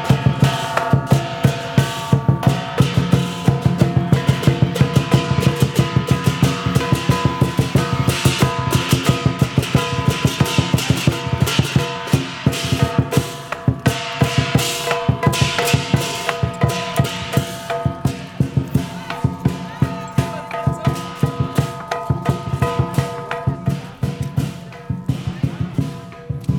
Mott St, New York, NY, USA - Chinese drums and snaps fireworks, Chinatown NY
Lunar New Year Festivities in Chinatown, NY.
Sounds of drums and snaps fireworks
Mott Street, Chinatown.
Zoom H6